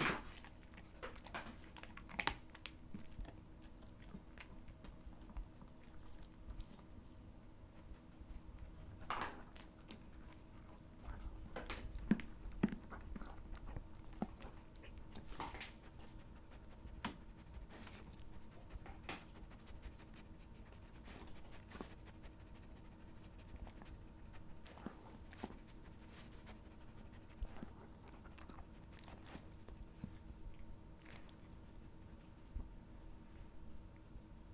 6125 Habitat dr. - Dogs eating bones
Dogs eating bones
CO, USA, 30 January 2013